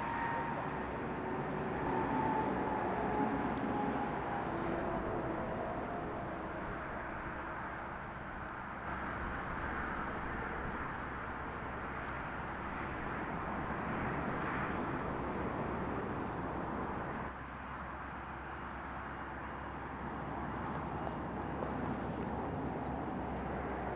Jarovce, Slovakia - soundscape - side of highway
Bratislavský kraj, Slovensko